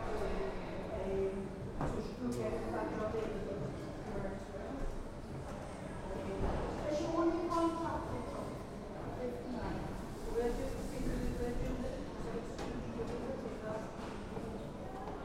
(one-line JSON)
{"title": "Discovery Museum, Newcastle upon Tyne, UK - Discovery Museum Closing Building Announcement", "date": "2016-09-07 15:55:00", "description": "Inside Discovery Museum just before closing to public. Children's ride sounds, lifts, museum staff and public, tannoy announcement for building closing to public. Recorded on Sony PCM-M10.", "latitude": "54.97", "longitude": "-1.63", "altitude": "52", "timezone": "Europe/London"}